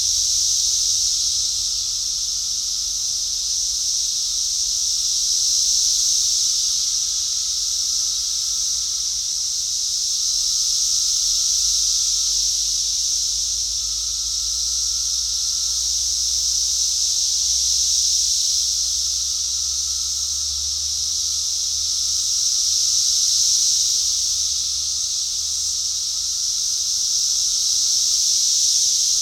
Brood X Cicadas 05 May 2021, recorded near Little Round Top in the Gettysburg National Military Park.
The insects were active and loud. There was some distant traffic which was mostly drowned out by the cicadas.
Sound Devices MixPre-3 v2.
AT 3032 omni mics spaces about 2 meters with Roycote baseball wind covers and fur over that.